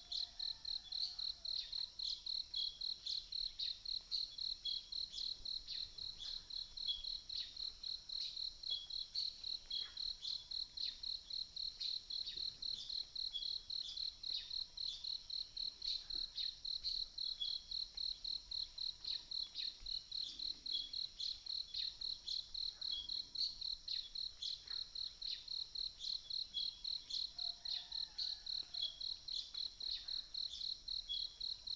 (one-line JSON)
{"title": "草湳溼地, 埔里鎮桃米里, Taiwan - Bird and Insects sounds", "date": "2016-07-13 04:55:00", "description": "in the wetlands, Bird sounds, Insects sounds", "latitude": "23.95", "longitude": "120.91", "altitude": "584", "timezone": "Asia/Taipei"}